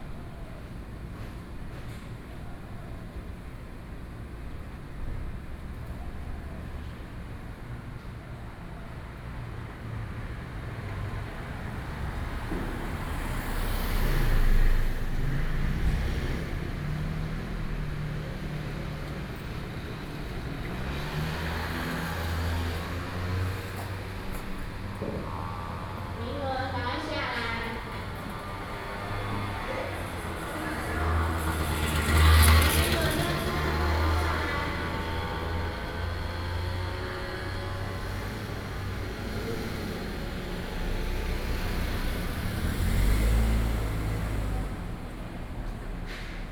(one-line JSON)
{"title": "Qingtian St., Da’an Dist. - Alley", "date": "2012-09-03 14:27:00", "description": "At the entrance to university classrooms, Traffic Sound, Alley\nBinaural recordings, Sony PCM D50", "latitude": "25.03", "longitude": "121.53", "altitude": "16", "timezone": "Asia/Taipei"}